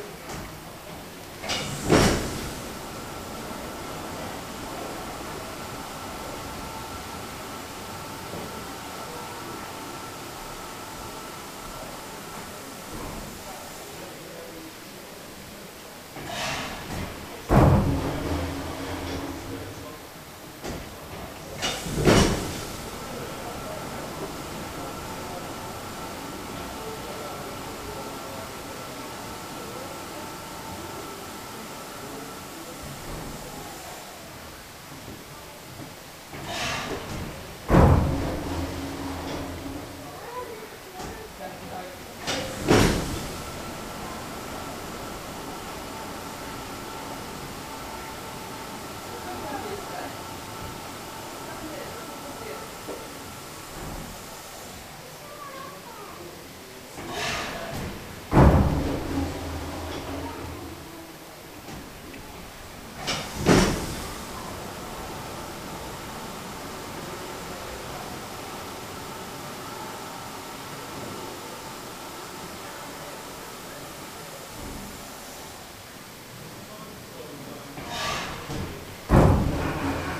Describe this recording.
Squeaky iron gate with cascading water background